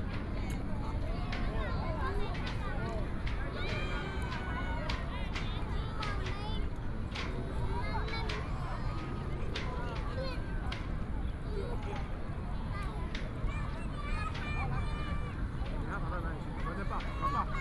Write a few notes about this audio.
Child, Square, Sony PCM D50 + Soundman OKM II